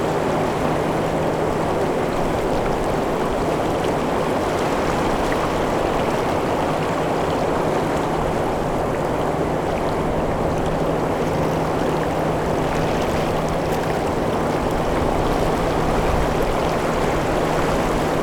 Trail, BC, Canada - Columbia River across from the Teck smelter
18 March 2014